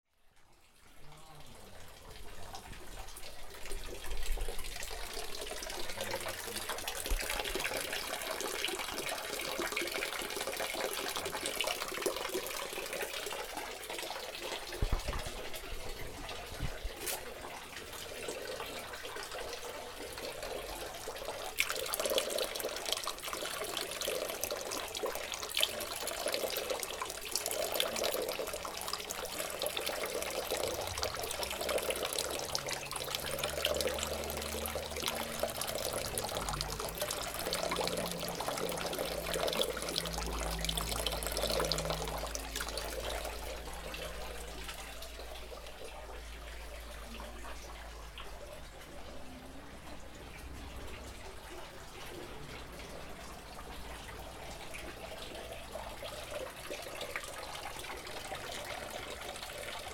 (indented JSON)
{
  "title": "Espace culturel Assens, Brunnen",
  "date": "2011-10-02 12:38:00",
  "description": "Espace culturel Assens, alter Brunnengeschichten neben zeitgenössischer Kunst.",
  "latitude": "46.61",
  "longitude": "6.63",
  "altitude": "646",
  "timezone": "Europe/Zurich"
}